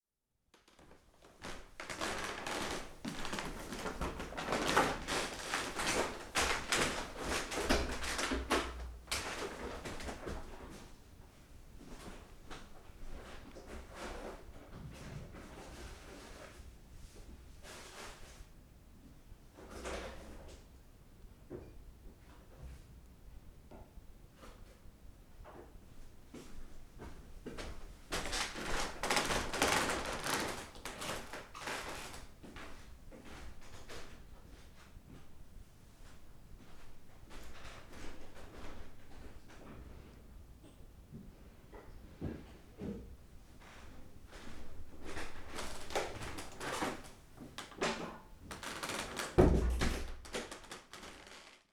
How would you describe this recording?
Old wooden floor and the loud noise it produces, when regularly walking on it. Late night coming home and putting things into place before going to sleep.